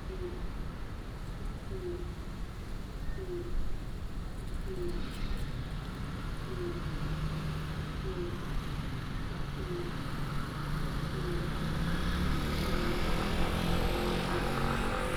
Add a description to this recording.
The streets of the morning, traffic sounds, bird, Binaural recordings